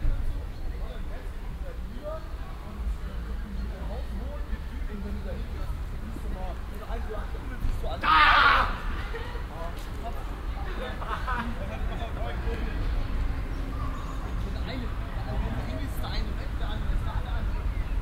osnabrück, schlosspark, schüler und glocken
project: social ambiences/ listen to the people - in & outdoor nearfield recordings